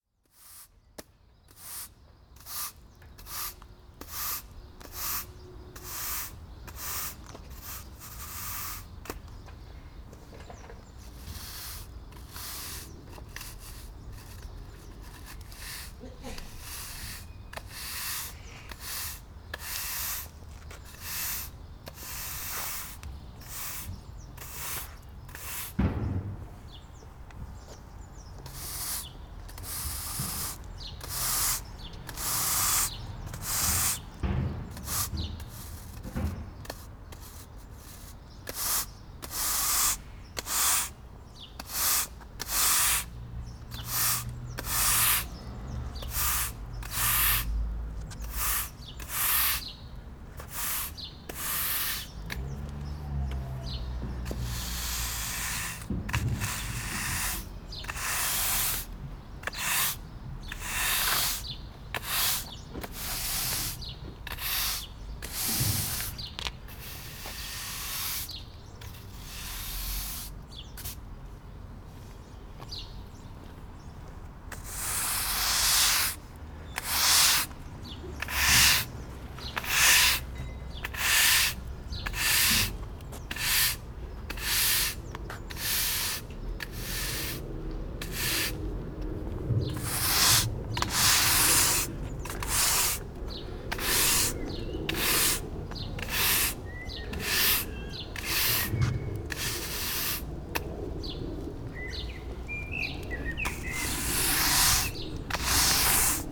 Nürtingen, Deutschland - Swabian 'Kehrwoche'
PCM-D50
...when it's our week to clean the communal areas